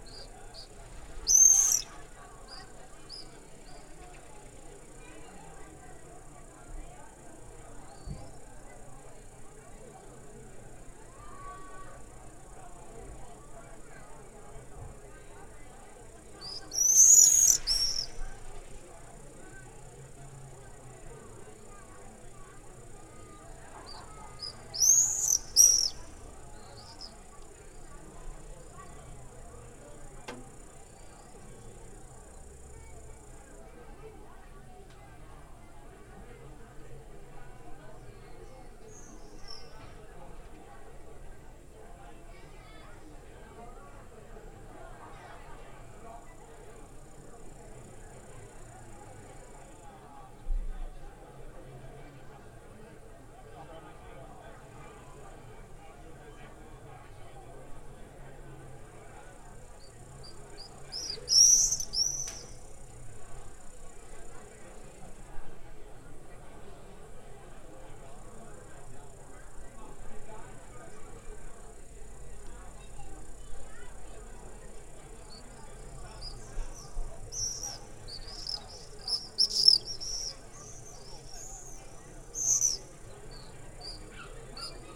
Swallows buzz the bell-tower :: Topolò UD, Italy
On several clear summer evenings I witnessed flights of swallows circling the valley and doing hard turns against the wall of the church bell-tower...no doubt in some sort of joyful game or show of stamina...the hard, flat wall of the church returned their cries...